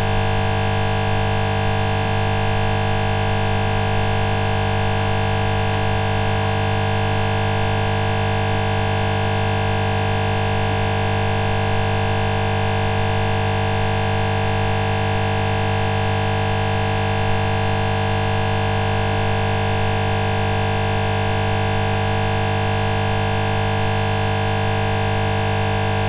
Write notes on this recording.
Very close proximity recording of an electrical power grid box. Sharp humming sounds are shifting a little bit in irregular intervals; some background noise from a nearby construction site, people walking by can be heard as well. Recorded with ZOOM H5.